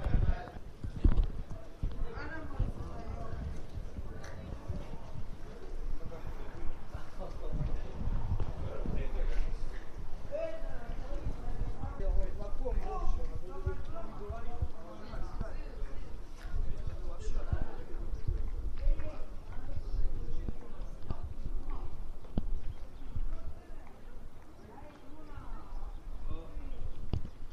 4t Platform of Baltijaam
leaving of the train
Tallinn, Estonia, 20 April 2011, 13:11